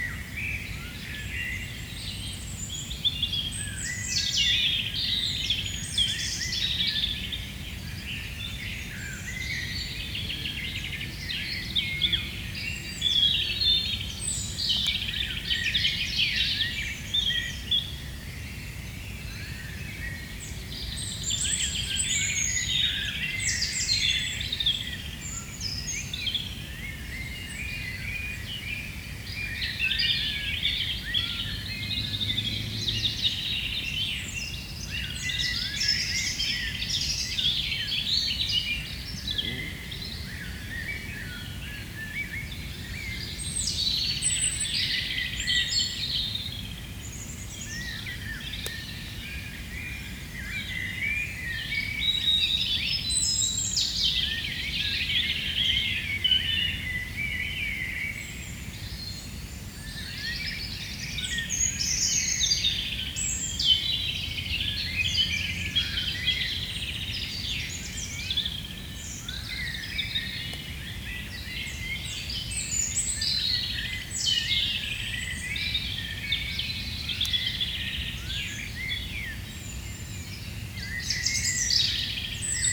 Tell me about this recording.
Forest quietly waking up, very early on the morning. Ambiance is not noisy, it's appeased. Robin singing on a nearby tree, and distant blackbirds.